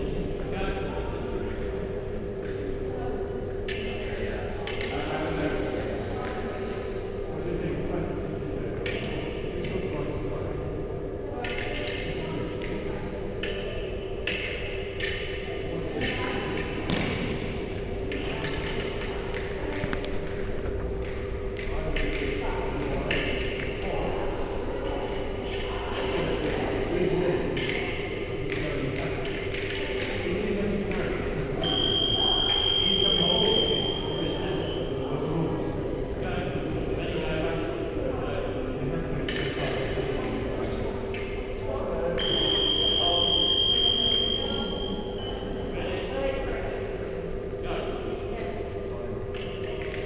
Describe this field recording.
In the last day of fencing course, the use of contact alarms are introduced. Therefore you can hear several bouts going on simultaneously. Appears to build in intensity of combat/competition